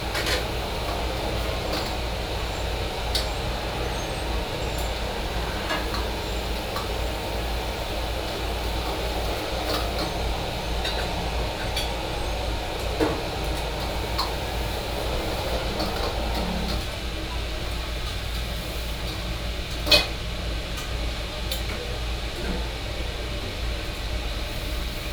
Xinsheng St., Tamsui Dist., New Taipei City - In the restaurant
In the restaurant
New Taipei City, Tamsui District, 新生街3巷27號, 20 August 2015